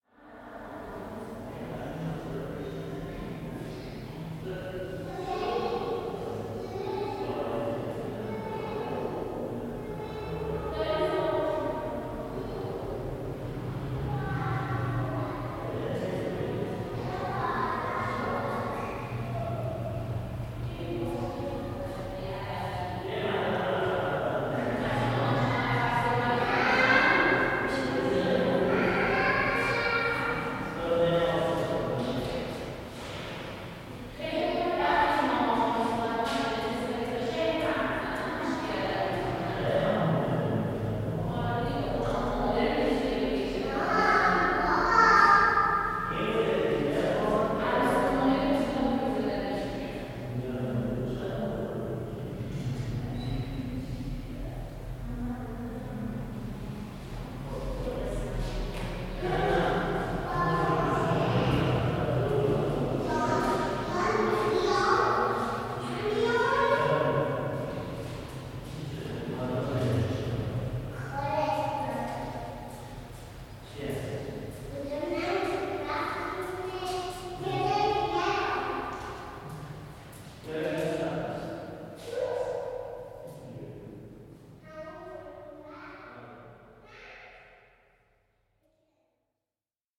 Field recording in Navab bath house. A part of my project in the sonic explorers project.
استان تهران, ایران, 10 October 2019, 15:00